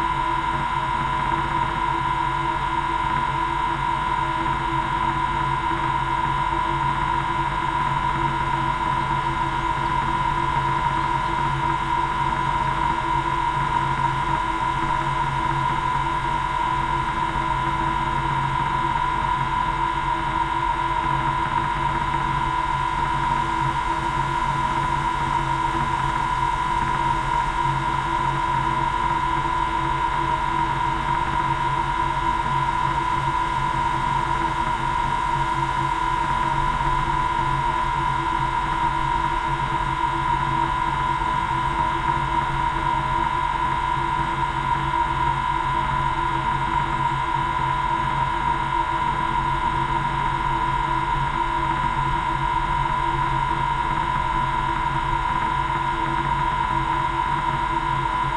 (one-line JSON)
{"title": "Malinowa, Sasino, Polska - water pump", "date": "2020-08-23 10:24:00", "description": "sound of a water pump installed in a well delivering water to a sprinkler system. (roland r-07)", "latitude": "54.76", "longitude": "17.74", "altitude": "23", "timezone": "Europe/Warsaw"}